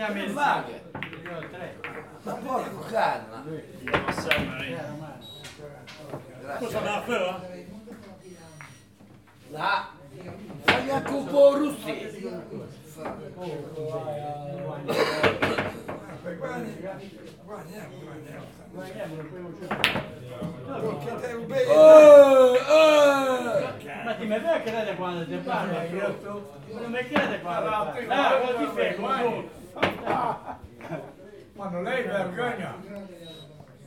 Viale Rimembranza, Sestri Levante GE, Italie - Bocette in fishermen house
Every day, retired fishermen go to the Fishermens House to play Bocette. The men throw billiard balls on the billiard table, while interpreting each other in a regional dialect. Tous les jours, les pêcheurs retraités se rendent à la Maison des pêcheurs pour jouer au bocette. Les hommes lancent des boules de billard sur le billard, tout en sinterpelant les uns les autres dans un dialecte régional.